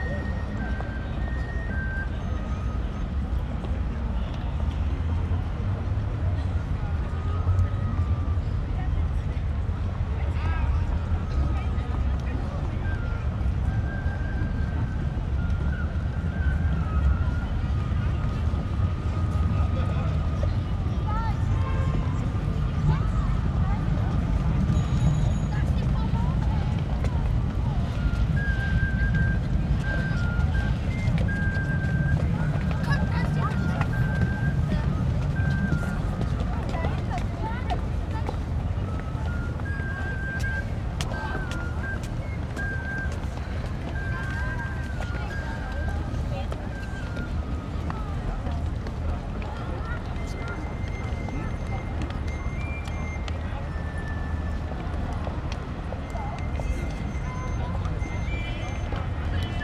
outside staircase, Dom / Hbf Köln - Sunday afternoon ambience
Sunday afternoon ambience, near Köln main station and Dom cathedral, on a big open staircase
(PCM D50, Primo EM172)
Cologne, Germany, 5 January 2014, ~13:00